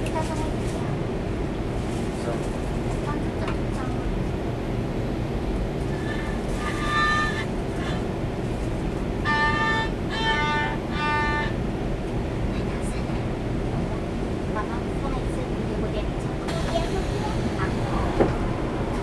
Linnei, Yunlin - inside the Trains
1 February, ~20:00